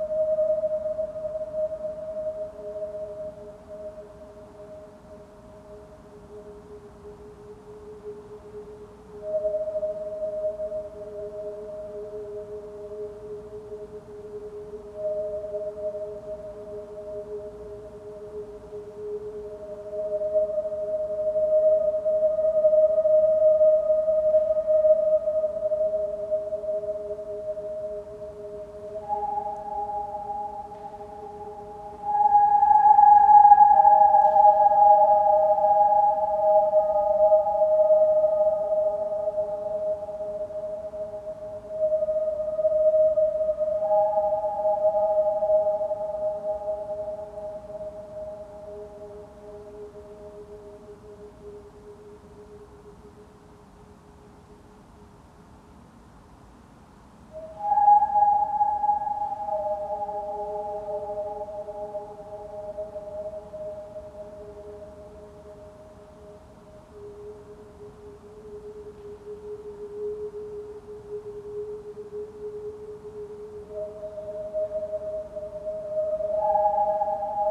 South Lanarkshire, UK - Swinging tube
Recorded with H4N and stereo microphone (Audio-technica, AT822) at the Hamilton Mausoleum, the longest lasting echo for a man-made structure (Perhaps, just in Scotland?).